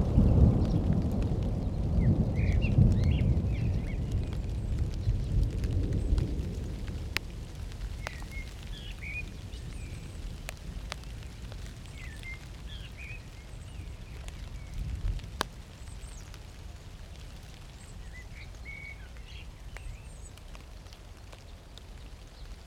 Das Nasse Dreieck (The Wet Triangle), wildlife and the distant city in a secluded green space, once part of the Berlin Wall, Berlin, Germany - Spatial thunder, crackly rain, evening blackbirds
Deutschland, 11 March 2021